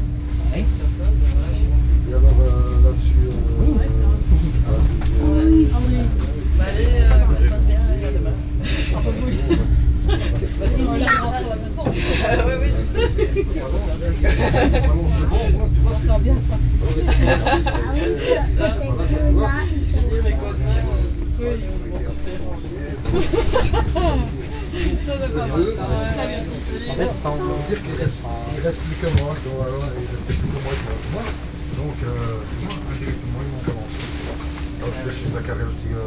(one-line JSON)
{"date": "2008-12-03 17:46:00", "description": "Train Near Brussels Nord, Father and son talking in the train, where to sleep, son is hungry.", "latitude": "50.87", "longitude": "4.37", "altitude": "18", "timezone": "Europe/Brussels"}